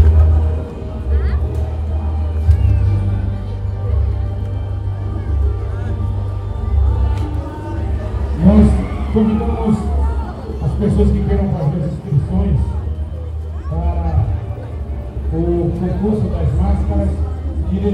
Maragogipe, BA, Brasil - Carnaval de Maragujipe 2014
Audio capturado na Praço Antonio Rebolsas em Maragujipe - BA, no dia 02 de Março de 2014.